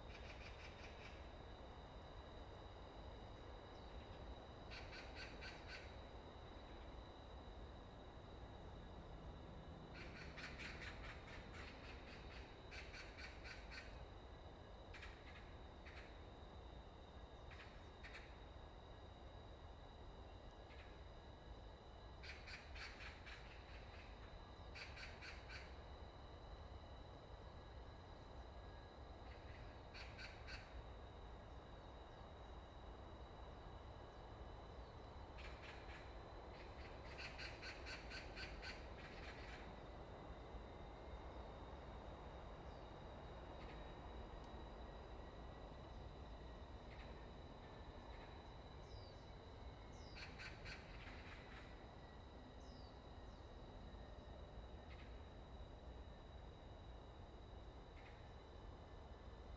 Kinmen National Park, Taiwan - In the woods
In the woods, Birds singing, Sound of insects, Wind
Zoom H2n MS +XY